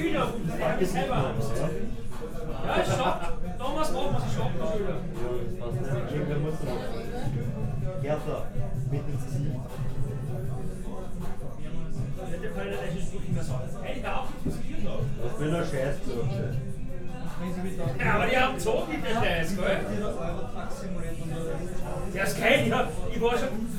Linz, Österreich - podium bar
podium bar, linz